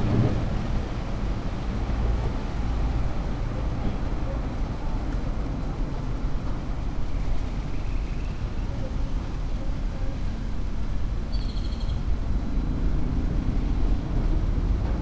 Traffic on Las Torres avenue during COVID-19 in phase 2 in León, Guanajuato. Mexico. In front of the Plaza Mayor shopping center.
This is a busy avenue. Although in this quarantine the difference in vehicular flow on this road is very noticeable.
(I stopped to record this while I was going to buy my mouth covers.)
I made this recording on April 14th, 2020, at 5:35 p.m.
I used a Tascam DR-05X with its built-in microphones and a Tascam WS-11 windshield.
Original Recording:
Type: Stereo
Esta es una avenida con mucho tráfico. Aunque en esta cuarentena sí se nota mucho la diferencia de flujo vehicular en esta vía.
(Me detuve a grabar esto mientras iba a comprar mis cubrebocas.)
Esta grabación la hice el 14 de abril 2020 a las 17:35 horas.
Guanajuato, México, April 2020